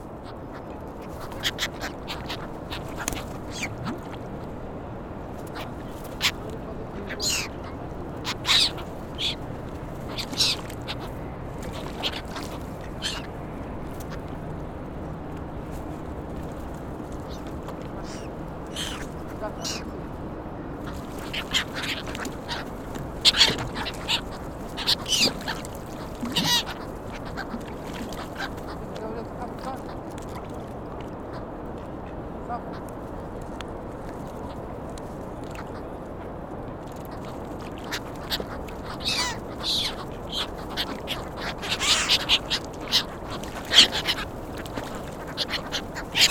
Quai Lucien Lombard, Toulouse, France - at the water’s edge
gull, duck, sound of water
at the water’s edge, walker speaks, noise from the city and traffic in the background
Capatation ZOOMH6